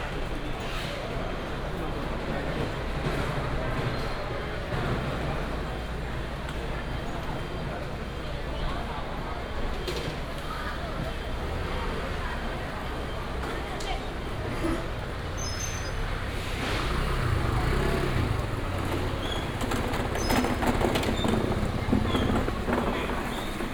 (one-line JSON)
{"title": "第一果菜市場, Wanhua Dist., Taipei City - Fruit wholesale market", "date": "2017-05-06 04:12:00", "description": "Walking in the Fruit wholesale market, Traffic sound", "latitude": "25.02", "longitude": "121.50", "altitude": "11", "timezone": "Asia/Taipei"}